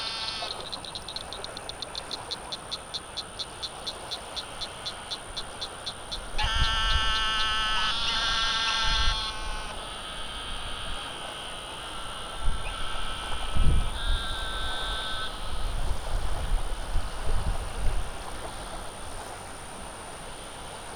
Bleaters and Clickers, Huntsville, TX, USA - Lakeside Frogs
Some of the amazing sounds we heard while hiking the Lone Star Trail through Sam Houston National Forest. It turned out to be a pretty challenging day for my gear with some pretty fierce wind penetrating my D50's Rycote windscreen and muddling up my recording a bit.
I was crouching in a bog-like area near the lake, listening to distant toads, when some much closer frogs and toads erupted with mass calls. The air traffic is pretty constant in this area but otherwise, I found the virtual silence I was looking for that day; a brief reprieve from the sometimes oppressive sounds of living in inner city Houston.
Recorded with a Sony PCM D50 and inadequate wind protection!